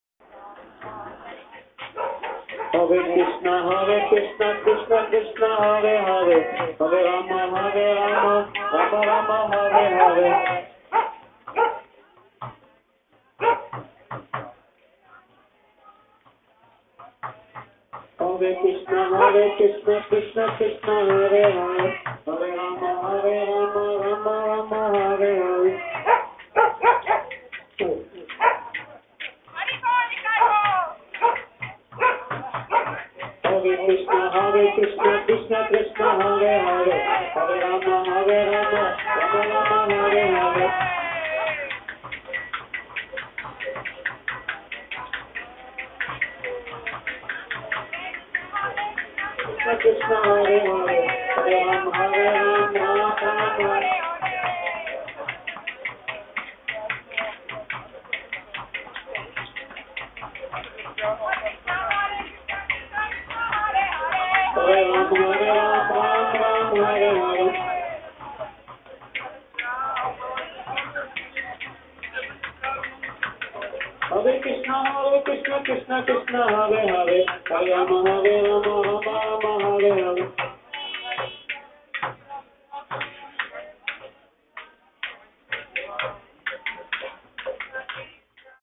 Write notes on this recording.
17.02.2009 13:00 krishna's disciples singing, dog attending. recorded with a mobile phone.